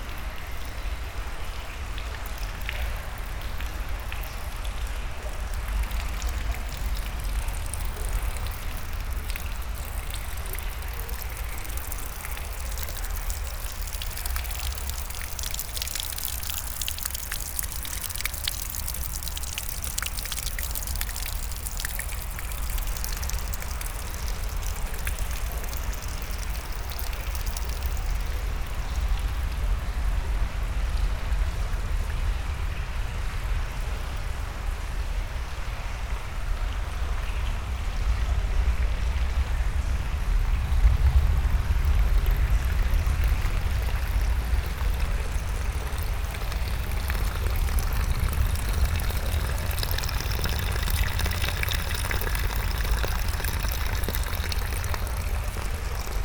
Vilvoorde, Belgium - Dirty underground river
Walking into the underground river Senne, called Zenne in dutch. The Senne river is underground during 11,5 kilometers, crossing all Brussels city. There's 3 tunnels, from Anderlecht to Vilvoorde. Here it's the last tunnel, in the Vilvoorde city. It's very dirty everywhere, will I survive ?